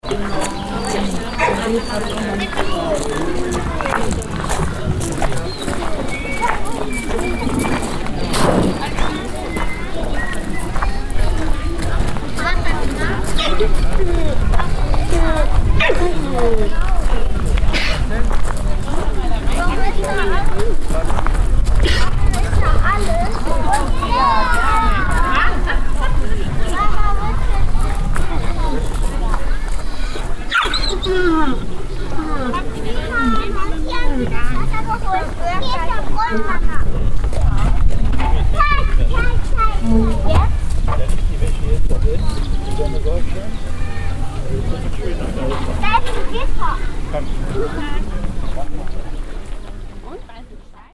lindlar, bergisches freilichtmuseum, visitors
ambience of visitors, here mostly families on a sunday, walking around in the traditional farm yard of the museum
soundmap nrw - social ambiences and topographic field recordings